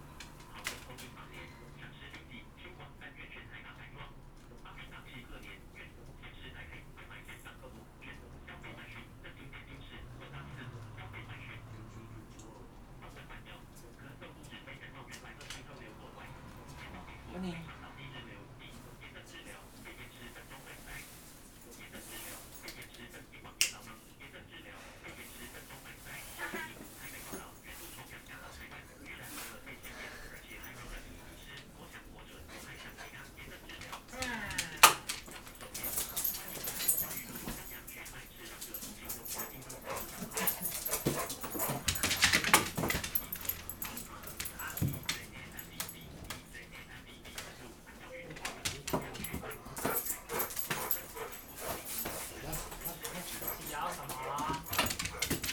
Taishan District, New Taipei City - Guide dog and owner
Guide dog and owner, Binaural recordings, Zoom H6+ Soundman OKM II
24 December 2013, ~18:00